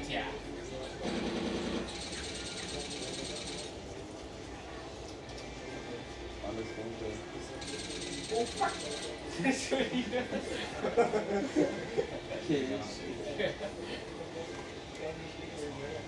Ackerstraße, Mitte, Berlin, Deutschland - Ackerstraße, Berlin - LAN party in a youth centre
Ackerstraße, Berlin - LAN party in a youth centre. The teenagers play a game called 'Battlefield 1942'. Still open in 2006, the youth centre is closed by now.
[I used an MD recorder with binaural microphones Soundman OKM II AVPOP A3]